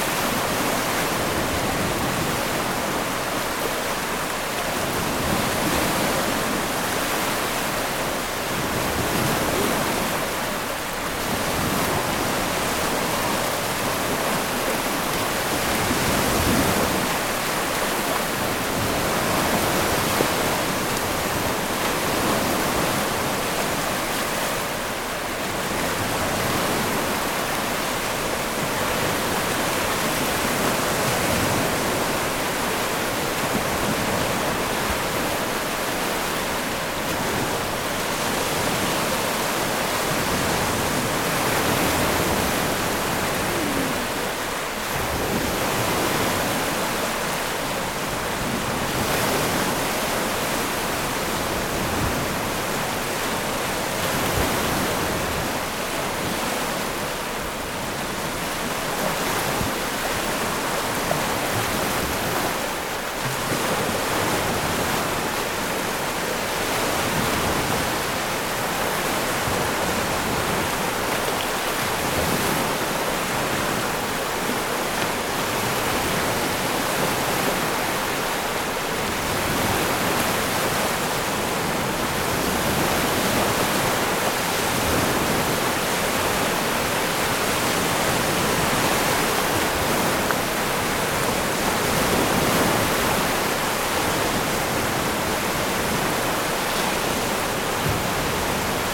Leamington, ON, Canada - Point Pelee
Recorded at the southernmost tip of mainland Canada. Because of currents in the area, waves approach from both sides, though moreso from the west (right).
Zoom H6 w/ MS stereo mic head.
Ontario, Canada, 21 May 2022, ~14:00